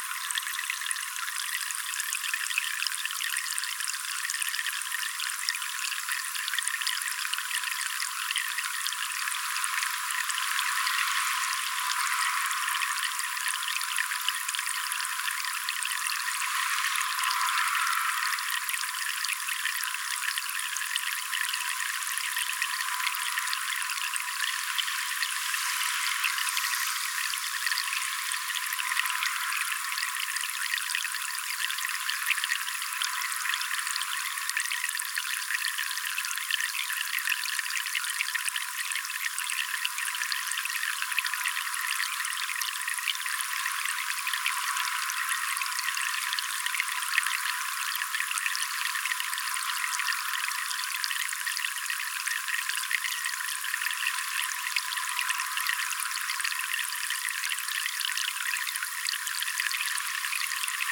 {
  "title": "Al Quoz - Dubai - United Arab Emirates - Streaming Tap",
  "date": "2016-01-16 12:52:00",
  "description": "Recording of a tap streaming water into a bucket in a small section of greenery.\nRecorded using a Zoom H4.\n\"Tracing The Chora\" was a sound walk around the industrial zone of mid-Dubai.\nTracing The Chora",
  "latitude": "25.15",
  "longitude": "55.23",
  "altitude": "19",
  "timezone": "Asia/Dubai"
}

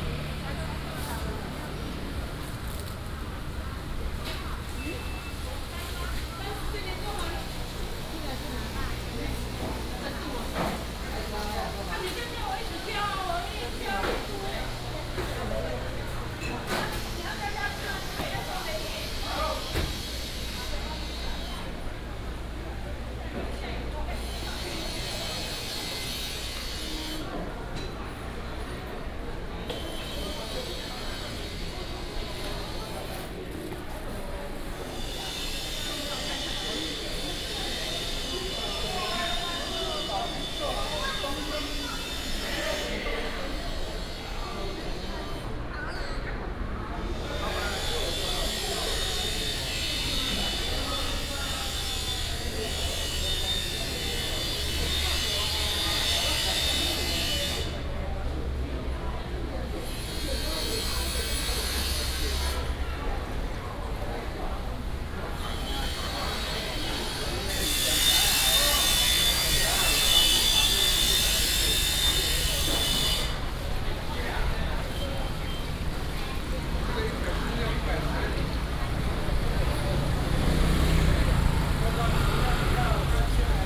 {
  "title": "中山市場, Hualien City - Walking in the traditional market",
  "date": "2016-12-14 17:18:00",
  "description": "Walking in the traditional market\nBinaural recordings",
  "latitude": "23.99",
  "longitude": "121.60",
  "altitude": "20",
  "timezone": "Europe/Berlin"
}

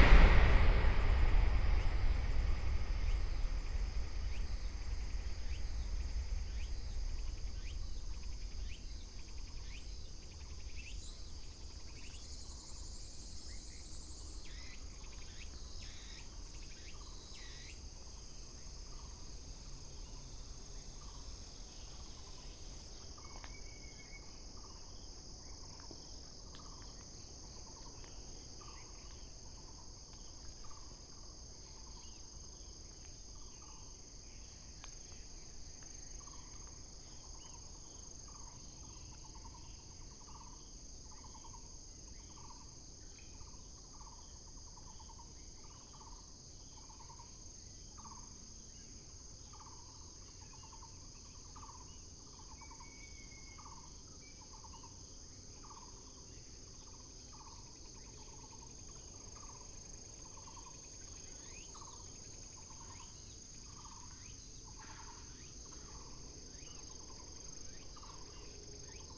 {
  "title": "Xinshan Rd., Baoshan Township - In the woods",
  "date": "2017-09-15 07:32:00",
  "description": "In the woods, Bird call, Insect sounds, Near the high speed railway, High-speed train passing through, Binaural recordings, Sony PCM D100+ Soundman OKM II",
  "latitude": "24.72",
  "longitude": "120.97",
  "altitude": "71",
  "timezone": "Asia/Taipei"
}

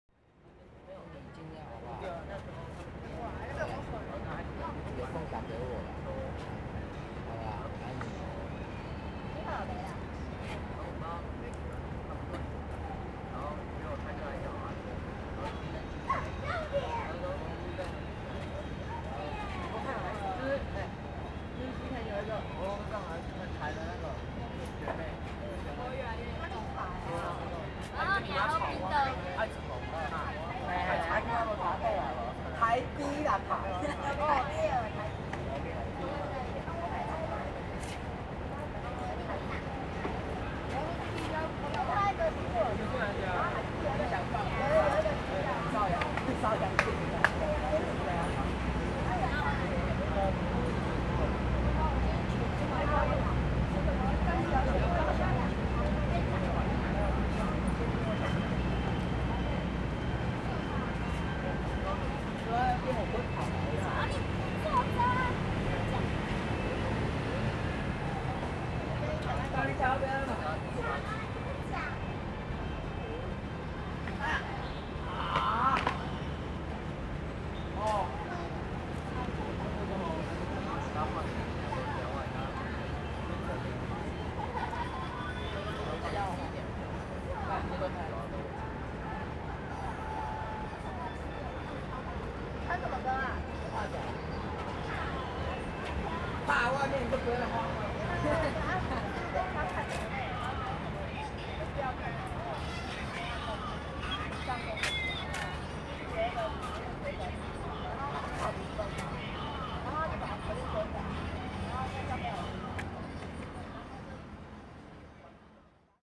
{
  "title": "Cianjin District - In the park",
  "date": "2012-03-29 17:34:00",
  "description": "A group of students chatter, Kids are playing games, Sony ECM-MS907, Sony Hi-MD MZ-RH1",
  "latitude": "22.63",
  "longitude": "120.29",
  "altitude": "4",
  "timezone": "Asia/Taipei"
}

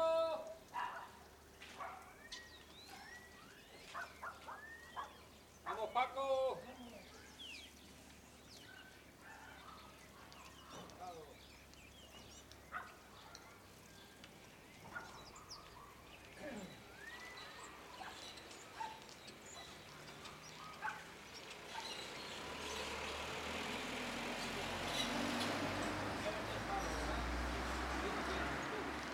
{
  "title": "C. Morales, Cogollos de la Vega, Granada, Espagne - Cogollos Vega - Andalousie - été 2015",
  "date": "2015-08-20 10:00:00",
  "description": "Cogollos Vega - Andalousie\nAmbiance estivale août 2015",
  "latitude": "37.27",
  "longitude": "-3.58",
  "altitude": "984",
  "timezone": "Europe/Madrid"
}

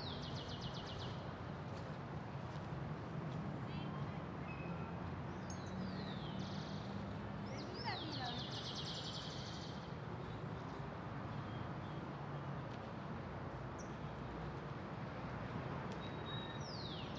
{
  "title": "Cl., Suba, Bogotá, Colombia - Library Julio Mario Santo Domingo",
  "date": "2021-05-12 11:30:00",
  "description": "Julio Mario Santo Domingo Library. Birds, wind, very little traffic of cars, buses, music in the distance, voices and footsteps on concrete and grass of people and a plane flying over at the end.",
  "latitude": "4.76",
  "longitude": "-74.06",
  "altitude": "2561",
  "timezone": "America/Bogota"
}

